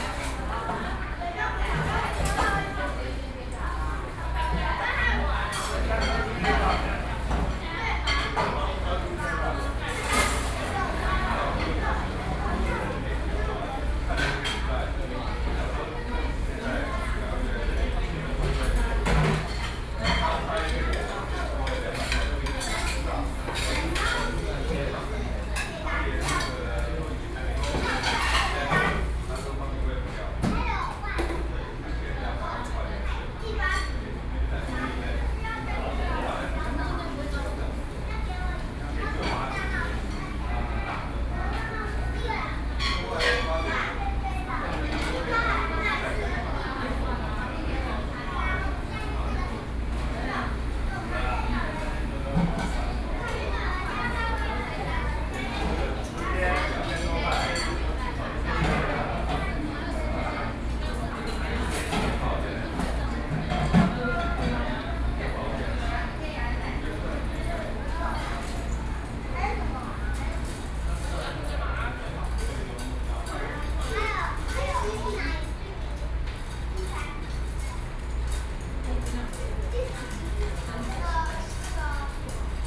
Noisy restaurant, Sony PCM D50, Binaural recordings
Beitou District, Taipei City, Taiwan